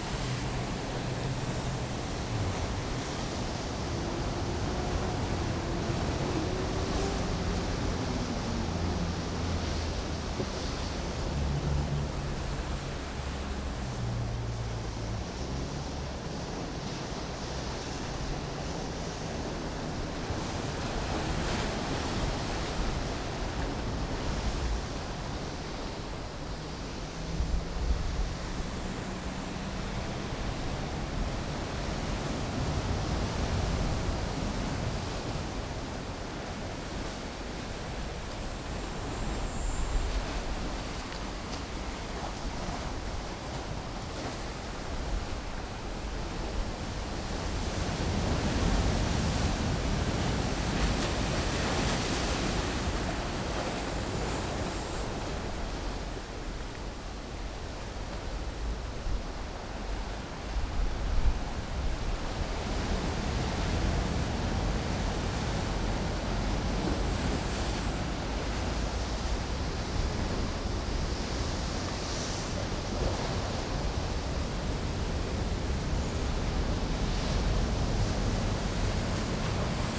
On the coast, Sound of the waves, Very hot weather
Zoom H6+ Rode NT4
Toucheng Township, Yilan County, Taiwan